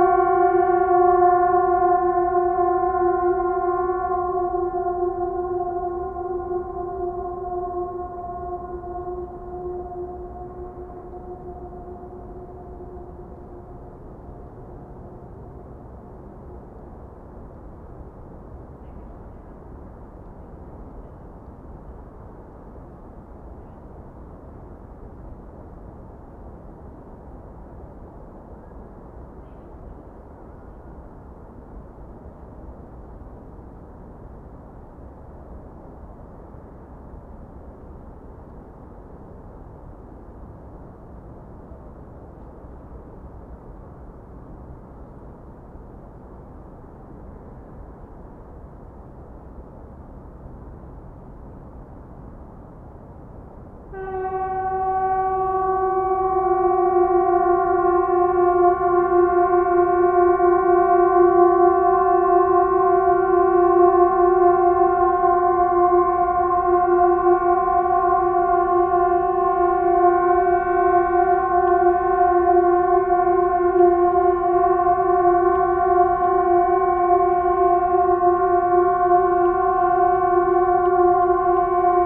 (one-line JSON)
{"title": "Umeå. Gammlia, Sirens test - Sirens test", "date": "2011-12-05 14:59:00", "description": "Sirens test. Emergency horns testing takes place every three months in the city on the first Monday of the month at 3pm. Stereo recording with Rode NT4.", "latitude": "63.83", "longitude": "20.29", "altitude": "64", "timezone": "Europe/Stockholm"}